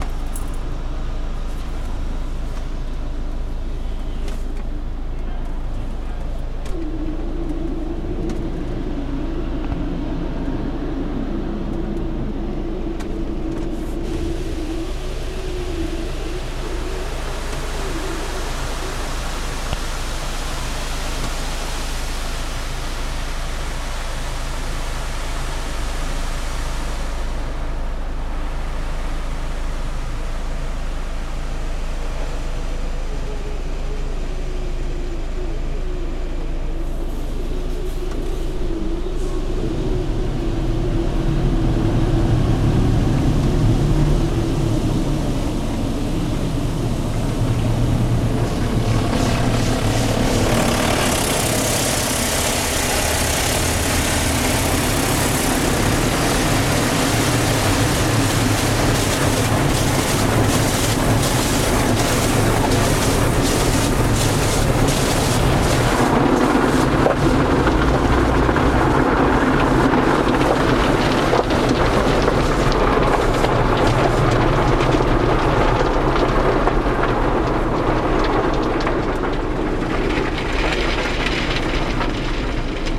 Hoofddorp, Nederland - Car Wash
The first half of a 6 minute carwash, that operates fully automatically.